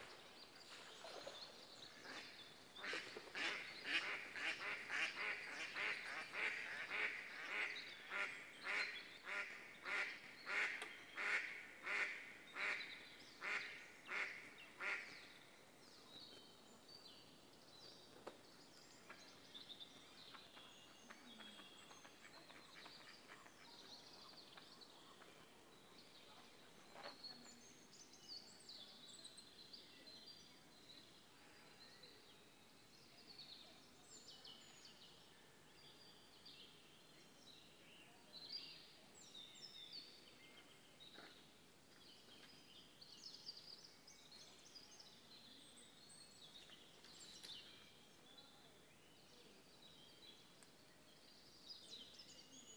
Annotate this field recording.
Recorded before the C19 Lockdown, in B-Format ambisonic on a Twirling720 mic with Android phone, interior of Rochester Cathedral during the exhibition Museum of the Moon